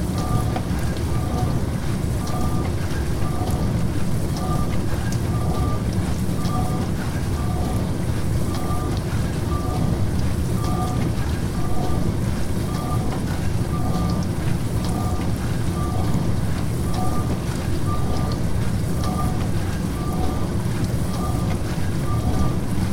Różana, Siemianowice Śląskie, Polska - Dishwasher
Dishwasher sounds
Tascam DR-100 (UNI mics)